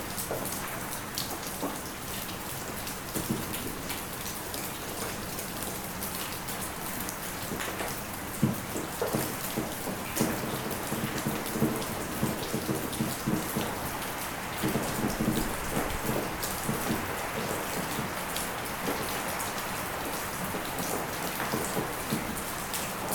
Fraissinet-de-Fourques, France - Endless rain
In a time of rain don't stop, I seek a refuge in the first barn I find. A puny dog is wandering.